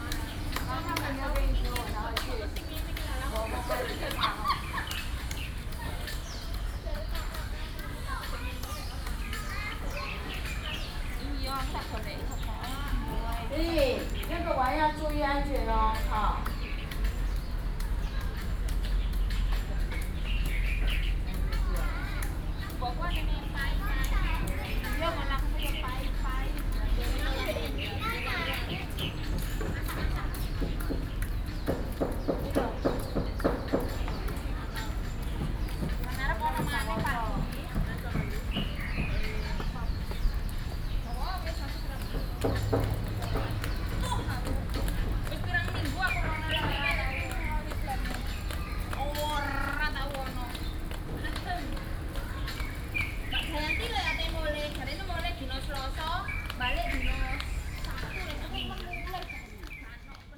Beitou, Taipei - People in the park
People from different countries chatting, Sony PCM D50 + Soundman OKM II
板橋區 (Banqiao), 新北市 (New Taipei City), 中華民國, 23 June 2012